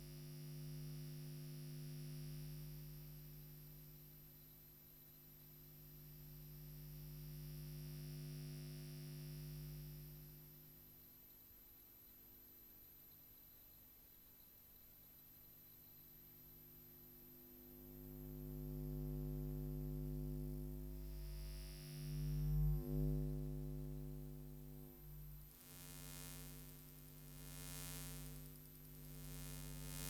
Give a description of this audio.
After recording the sound of the photobooth I decided to explore the secret sonorities of the electromagnetic waves moving inside this very small place. Recorded inside the booth with EDIROL R-09 and telephone pickup.